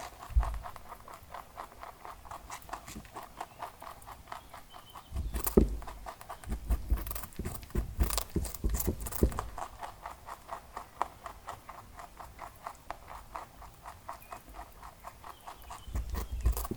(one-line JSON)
{"title": "Court-St.-Étienne, Belgique - Rabbit eating", "date": "2016-07-13 19:00:00", "description": "Clovis the rabbit is eating some carrots.", "latitude": "50.62", "longitude": "4.54", "altitude": "128", "timezone": "Europe/Brussels"}